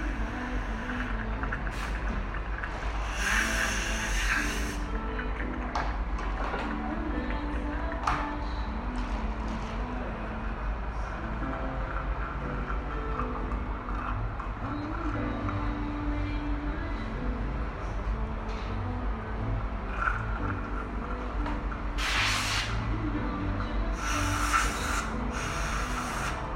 Cra., Medellín, Antioquia, Colombia - Universidad de Medellín, odontología
Descripción
Sonido tónico: Agua fluyendo, música de ambiente
Señal sonora: Utensilio dental, intervención odontólogo
Micrófono dinámico (Celular)
Altura 1 metro
Duración 3:11
Grabado por Luis Miguel Henao y Daniel Zuluaga